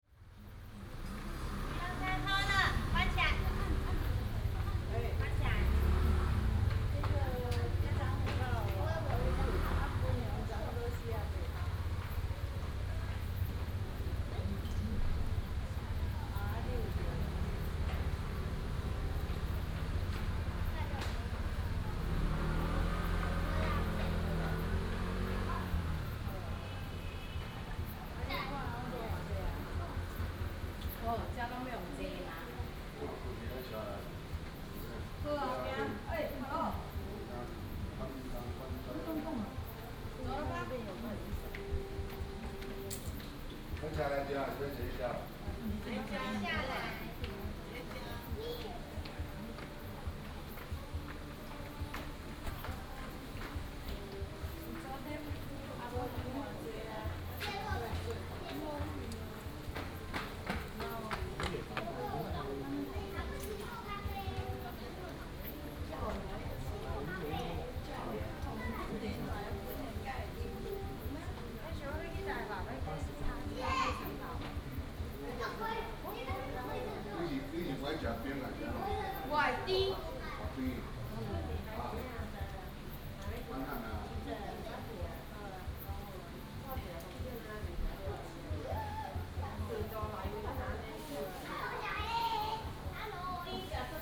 Wufeng Rd., Jiaoxi Township, Yilan County - In the pavilion
In the pavilion, Tourists and children